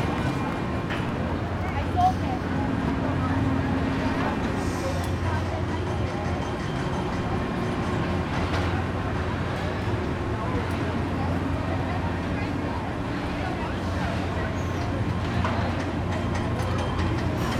neoscenes: state fair circle ride
Pueblo, CO, USA, 29 August 2011